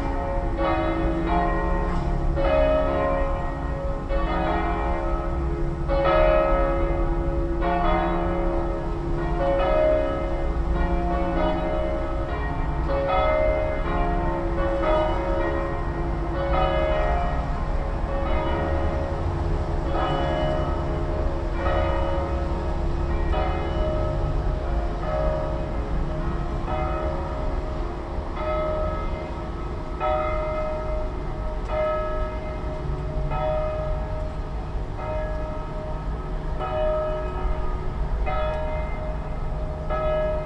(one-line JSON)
{"title": "Montagnana Province of Padua, Italy - easter-bells campane-da-pasqua osterglocken", "date": "2012-04-08 17:58:00", "description": "osterglocken, abends; easter bells in the evening; campane da pasqua, sera", "latitude": "45.23", "longitude": "11.47", "altitude": "16", "timezone": "Europe/Rome"}